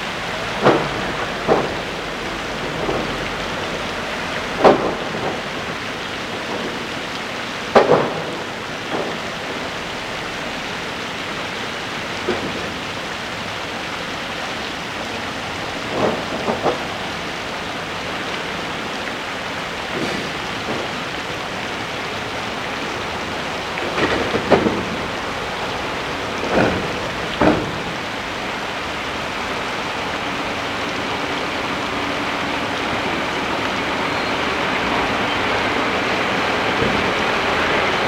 Torvet, Arendal, Norway - Water from fountain, deliveries being unloaded and children playing on the playground.
Recorded with Tascam DR-40 out of a 3rd floor office building pointing down to the square where you can hear children playing while water dances from the fountain | Andrew Smith